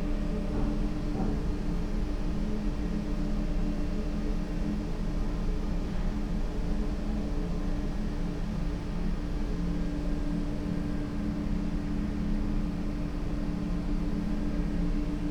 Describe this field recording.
interfering ventilation drones, (Sony PCM D50, Primo EM172)